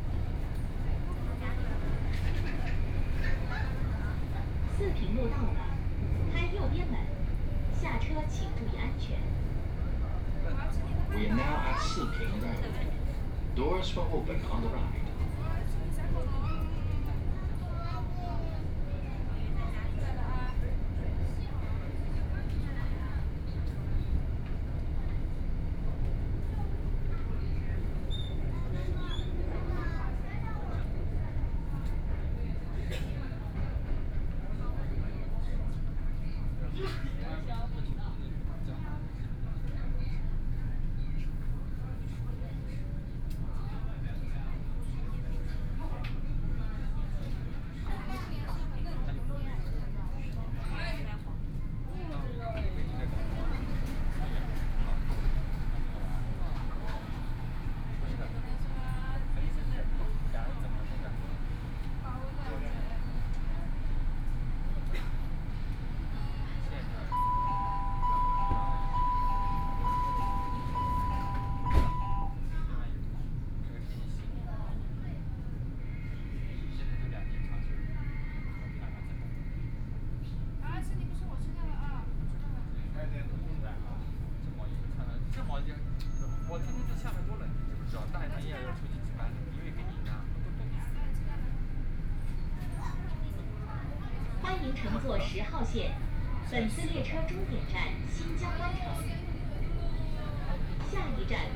Yangpu District, Shanghai - Line 10 (Shanghai Metro)
from Youdian Xincun station to Wujiaochangstation, Binaural recording, Zoom H6+ Soundman OKM II
23 November 2013, 19:10